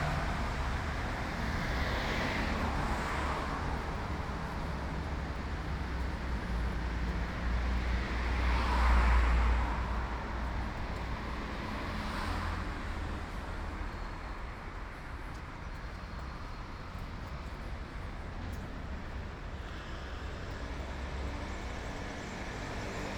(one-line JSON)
{"title": "Ascolto il tuo cuore, città. I listen to your heart, city. Chapter CXIII - Valentino Park in summer at sunset soundwalk and soundscape in the time of COVID19: soundwalk & soundscape", "date": "2020-06-30 21:15:00", "description": "\"Valentino Park in summer at sunset soundwalk and soundscape in the time of COVID19\": soundwalk & soundscape\nChapter CXIII of Ascolto il tuo cuore, città. I listen to your heart, city\nTuesday, June 30th 2020. San Salvario district Turin, to Valentino park and back, one hundred-twelve days after (but day fifty-eight of Phase II and day forty-five of Phase IIB and day thirty-nine of Phase IIC and day 16th of Phase III) of emergency disposition due to the epidemic of COVID19.\nStart at 9:16 p.m. end at 10:03 p.m. duration of recording 46’50”; sunset was at 9:20 p.m.\nThe entire path is associated with a synchronized GPS track recorded in the (kmz, kml, gpx) files downloadable here:", "latitude": "45.06", "longitude": "7.69", "altitude": "221", "timezone": "Europe/Rome"}